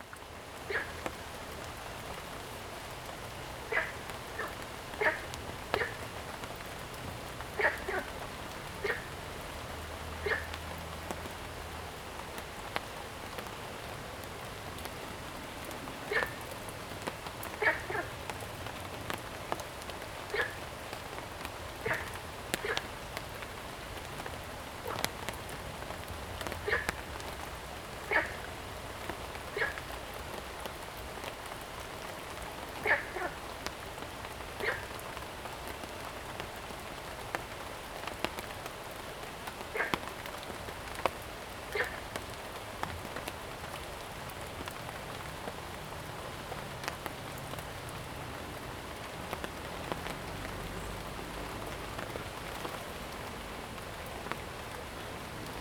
Fuyang Eco Park, 大安區 Taipei City - Rain and Frogs
In the park, Frogs chirping, Rainy Day
Zoom H2n MS+XY
Taipei City, Taiwan, 4 July 2015, 18:41